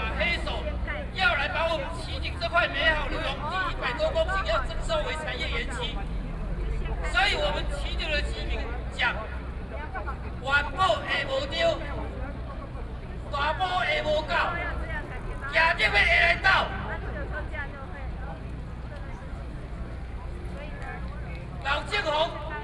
Ketagalan Boulevard, Taipei - Protest
Protest, Sony PCM D50 + Soundman OKM II
台北市 (Taipei City), 中華民國, 18 August 2013